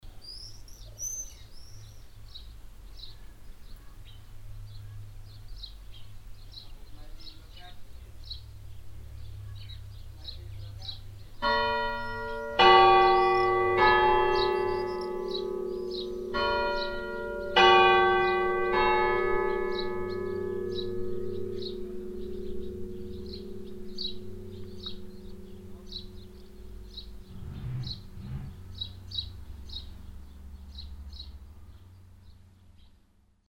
munshausen, church, bells
On the cementery the half past four church bells on a warm, windy summer day.
Munshausen, Kirche, Glocken
Auf dem Friedhof die Halb-Fünf-Uhr-Glocke an einem warmen windigen Sommertag.
Munshausen, tracteur, hirondelles
Le carillon de 16h30 entendu depuis le cimetière, un jour d’été chaud et venteux.
Project - Klangraum Our - topographic field recordings, sound objects and social ambiences
Munshausen, Luxembourg, 12 July, ~13:00